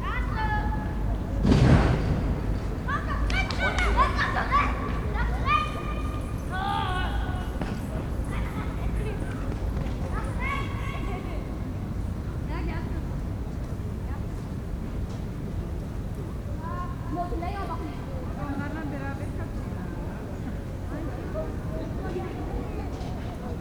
berlin, wildmeisterdamm: gropiushaus, innenhof - the city, the country & me: inner yard of gropiushaus
playing kids, worker loads logs on a truck
the city, the country & me: august 3, 2011